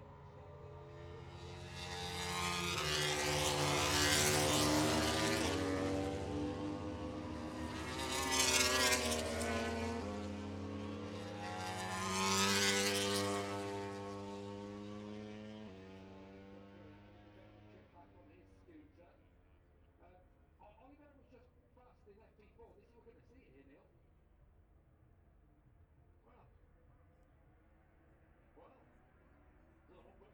{
  "title": "Silverstone Circuit, Towcester, UK - british motorcycle grand prix 2022 ... moto grand prix ... ...",
  "date": "2022-08-06 14:10:00",
  "description": "british motorcycle grand prix 2022 ... moto grand prix qualifying one ... outside of copse ... dpa 4060s clipped to bag to zoom h5 ...",
  "latitude": "52.08",
  "longitude": "-1.01",
  "altitude": "158",
  "timezone": "Europe/London"
}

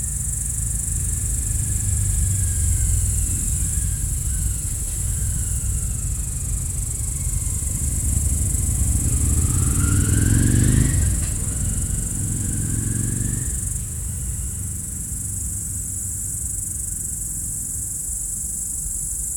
{
  "title": "route du col du Chat, Bourdeau, France - Au crépuscule",
  "date": "2006-09-09 20:00:00",
  "description": "Au bord de la route du col du Chat au dessus du lac du Bourget les insectes du talus, sauterelles vertes, passage d'une moto en descente et de voitures, la nuit arrive . enregistreur DAT Teac Tascam DAP1, extrait d'un CDR gravé en 2006 .",
  "latitude": "45.69",
  "longitude": "5.85",
  "altitude": "447",
  "timezone": "Europe/Paris"
}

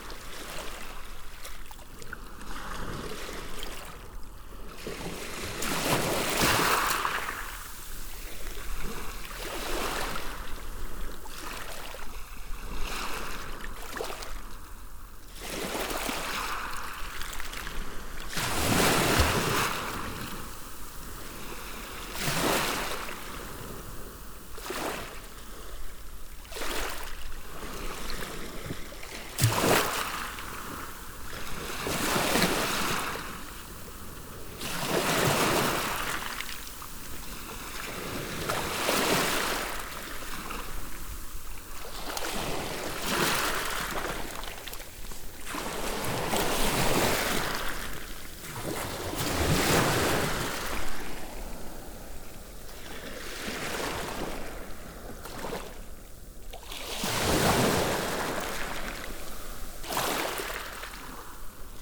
{
  "title": "Cayeux-sur-Mer, France - The sea at Pointe du Hourdel",
  "date": "2017-11-01 08:20:00",
  "description": "Sound of the sea, with waves lapping on the gravels, at pointe du Hourdel, a place where a lot of seals are sleeping.",
  "latitude": "50.22",
  "longitude": "1.55",
  "altitude": "3",
  "timezone": "Europe/Paris"
}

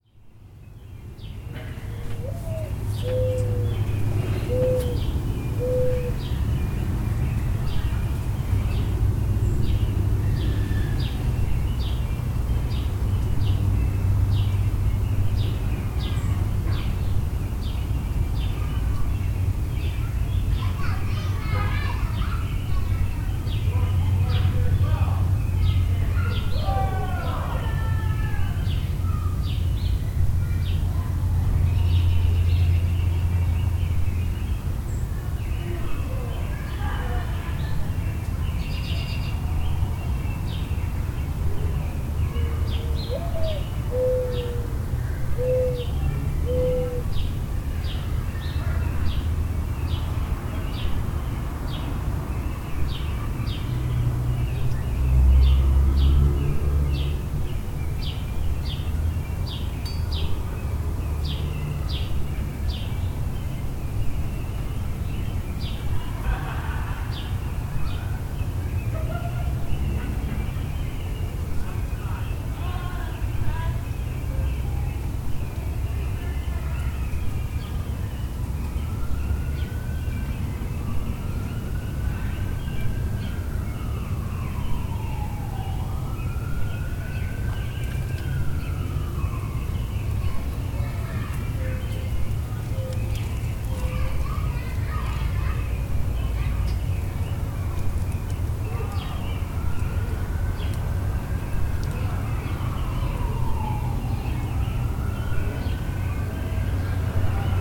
{
  "title": "Queensdale Ave, East York, ON, Canada - Spring Oudoor Sounds",
  "date": "2022-05-05 16:22:00",
  "description": "General suburban sounds in the late afternoon of a mid-Spring day. Calls of mourning doves, sparrows, and robins; sounds of passing air and ground vehicles, emergency sirens, people talking, a few brief dings from a wind chime, and the music of an ice cream truck. Zoom H4n using built-in mics and placed on an upside-down flower pot.",
  "latitude": "43.69",
  "longitude": "-79.33",
  "altitude": "119",
  "timezone": "America/Toronto"
}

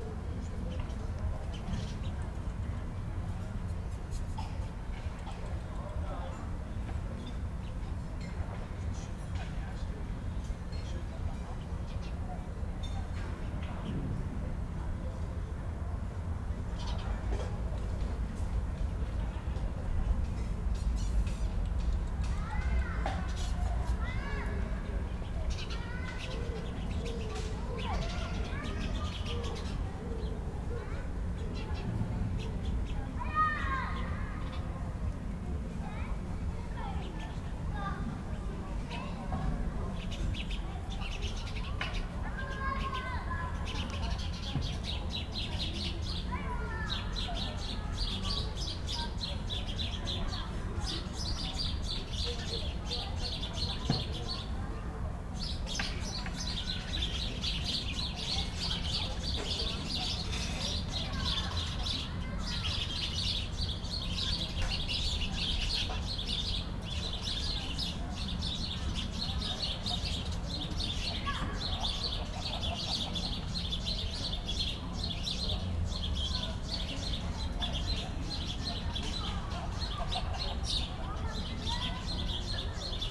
{
  "title": "Wolfgang-Heinz-Straße, Berlin-Buch - within residential building blocks, evening ambience",
  "date": "2019-09-01 19:05:00",
  "description": "place revisited, late summer Sunday early evening, yard ambience between buildings\n(SD702, DPA4060)",
  "latitude": "52.63",
  "longitude": "13.49",
  "altitude": "57",
  "timezone": "Europe/Berlin"
}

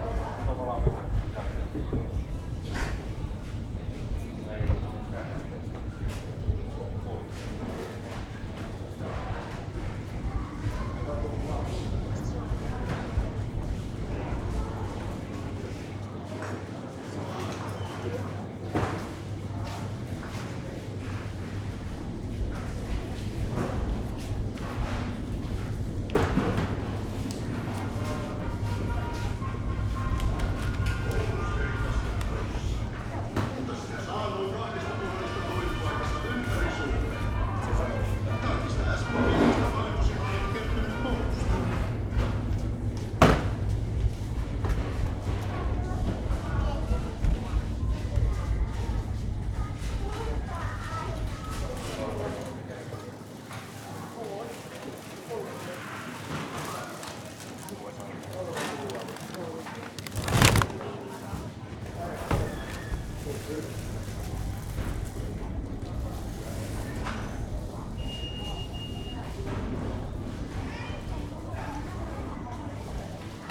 {
  "title": "Prisma supermarket, Rovaniemi, Finland - Midsummer shopping",
  "date": "2020-06-19 11:20:00",
  "description": "It's midsummer eve, a national holiday in Finland. People are shopping for their last-minute groceries. Especially a lot of families at the store. Zoom H5, default X/Y module inside the shopping cart.",
  "latitude": "66.49",
  "longitude": "25.69",
  "altitude": "90",
  "timezone": "Europe/Helsinki"
}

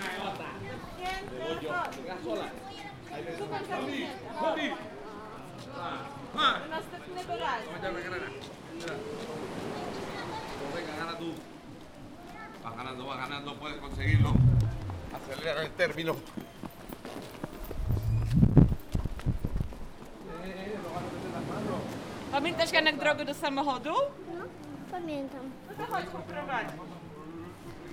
{
  "title": "Pasaje Puertito Sau, El Puertito, Santa Cruz de Tenerife, Hiszpania - Nightfall at El Puertito",
  "date": "2019-02-10 18:10:00",
  "description": "Everybody already left the beach. Two girls are still dancing. Kids don't want to go back home.",
  "latitude": "28.11",
  "longitude": "-16.77",
  "altitude": "1",
  "timezone": "Atlantic/Canary"
}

